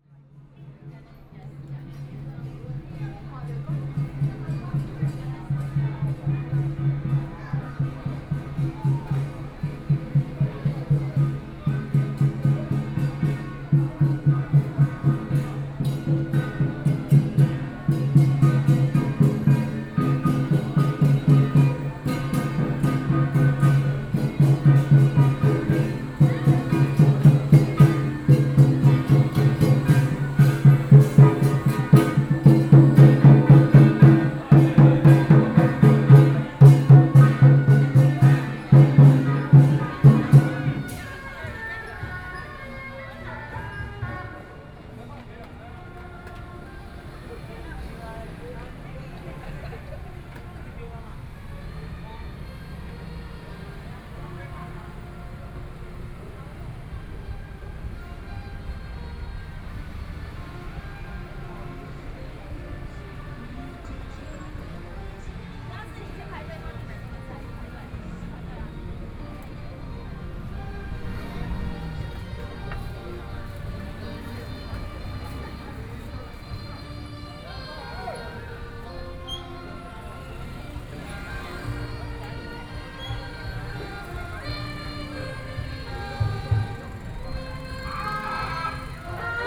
Guanghua Rd., Luzhou, New Taipei City - Traditional temple Festival
Traditional temple Festival, Binaural recordings, Sony PCM D50 + Soundman OKM II
Luzhou District, New Taipei City, Taiwan